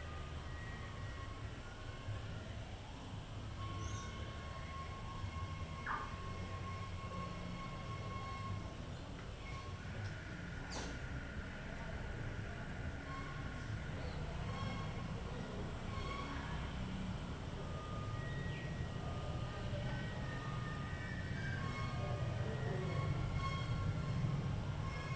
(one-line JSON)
{"title": "Fundación El Boga. Mompós, Bolívar, Colombia - El patio de El Boga", "date": "2022-04-17 14:25:00", "description": "En la tarde, desde una hamaca en el patio colonial, se escuchan los pájaros y los sonidos distantes de carros y música.", "latitude": "9.24", "longitude": "-74.42", "altitude": "20", "timezone": "America/Bogota"}